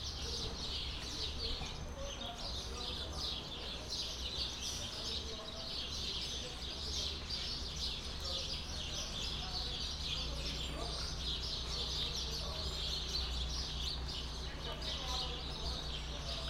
Praça do terminal rodoviária de São Félix com os primeiros sons do dia. Saí de casa de pijama pra realizar esta atividade.
Gravado com o gravador Tascam D40
por Ulisses Arthur
Atividade da disciplina de Sonorização, ministrada pela professora Marina Mapurunga, do curso de cinema e audiovisual da Universidade Federal do Recôncavo da Bahia (UFRB).
Bahia, Brazil, 15 March 2014